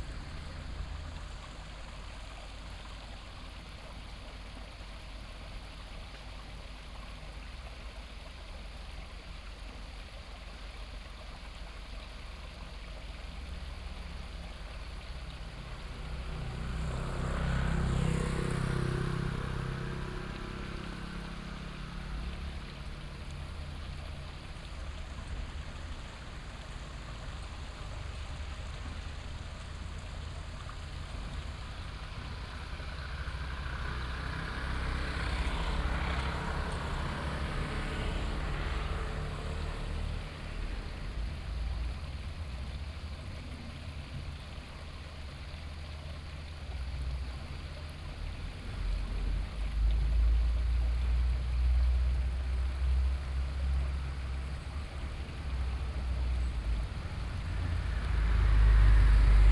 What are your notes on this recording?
At the stream side, Traffic sound, truck, Construction sound, Binaural recordings, Sony PCM D100+ Soundman OKM II